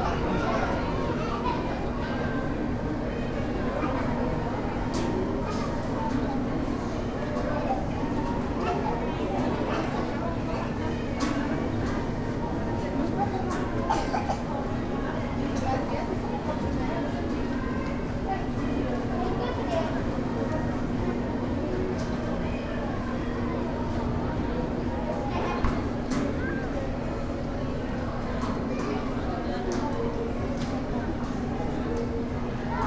Portal Guerrero, Centro, Centro, Gto., Mexico - En las mesas de la parte de afuera de la nevería Santa Clara zona peatonal.
At the tables outside the Santa Clara ice cream parlor pedestrian zone.
I made this recording on july 25th, 2022, at 13:43 p.m.
I used a Tascam DR-05X with its built-in microphones and a Tascam WS-11 windshield.
Original Recording:
Type: Stereo
Esta grabación la hice el 25 de julio 2022 a las 13:43 horas.